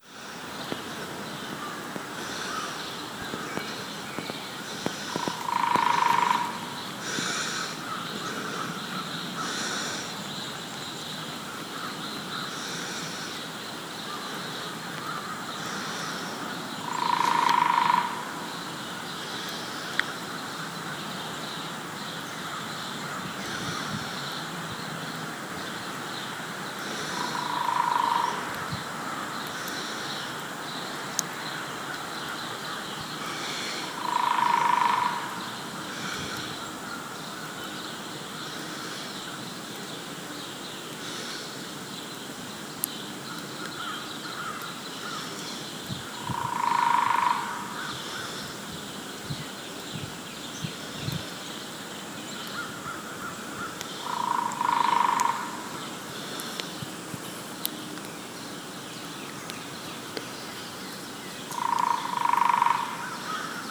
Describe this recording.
Vassar Farm, mild winter day after fresh snow, snowshoeing through woods: woodpecker, dripping snowmelt from trees, distant birds, cars